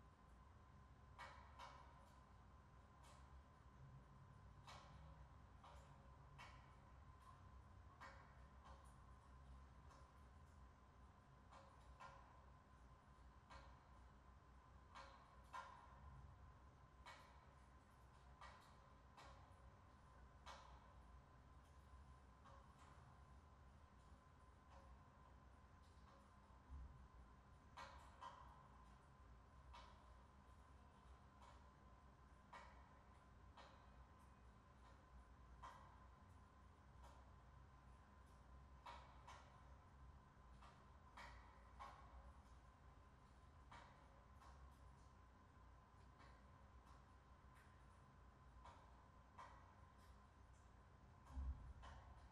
Rijeka, Spirit, Field Recording Session
world listening day
July 2010